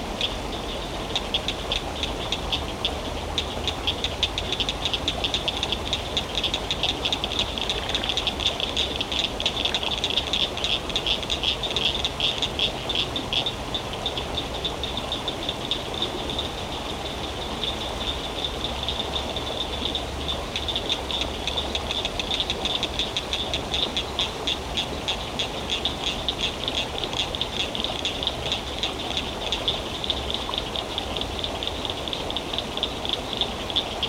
evening frogs at brushy creek, Round Rock TX
Texas, USA